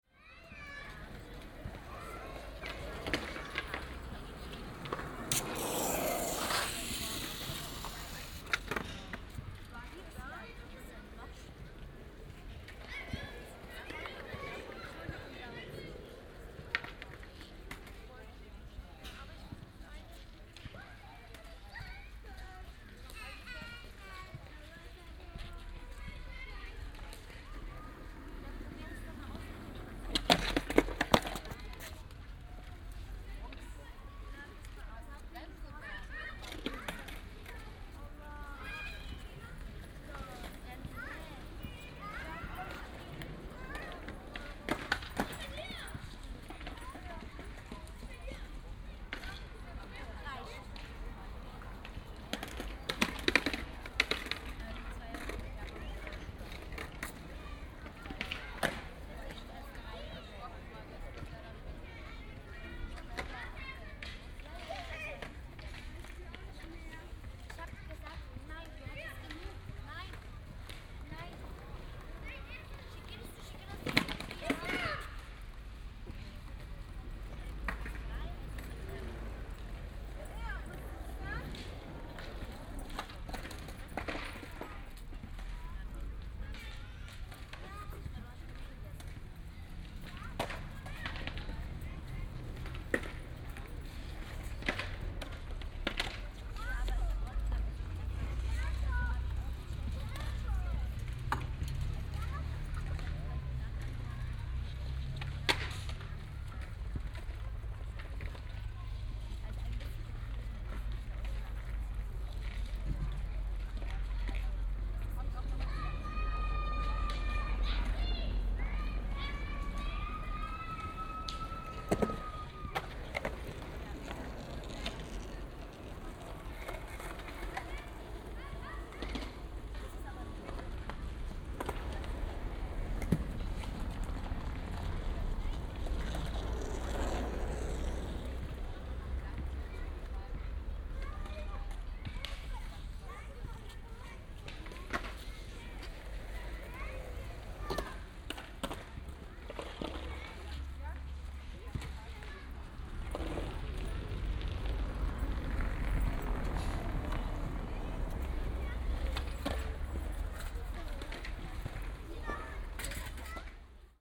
Lohmühlenplatz, Skater
Sat., 30.08.2008, 17:15
children, parents, kids skating
Berlin, 30 August 2008, 17:15